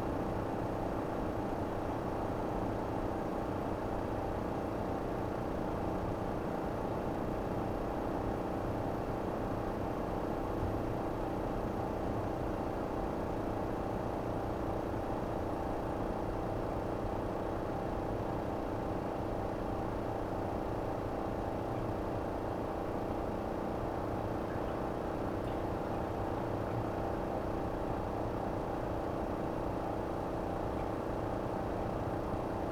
this is a dark and infrequently visited narrow corner, between houses and the railroad embankment. an aircon ventilator is slightly moving, another train passes.